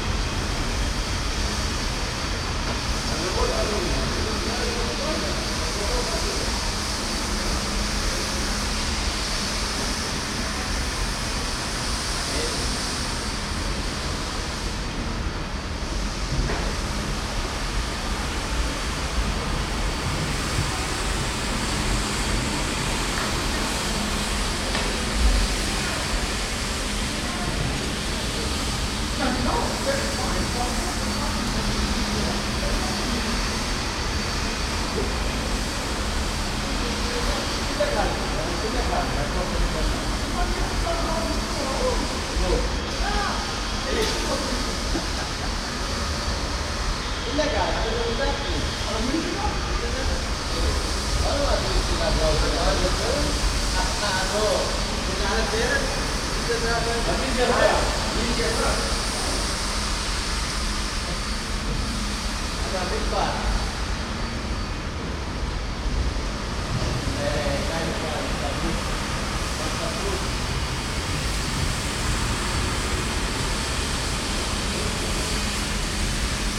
essen, rathaus galerie, entry to subway
Im Zugangsbereich zu den U Bahnen. Geräusche von Passanen, der Rolltreppenanlage und der Bodenreinigungsmaschine eines Reinigungsteams.
at the entrance to the subway station. souns of pasengers, the moving stairways and a cleaning machine
Projekt - Stadtklang//: Hörorte - topographic field recordings and social ambiences
Essen, Germany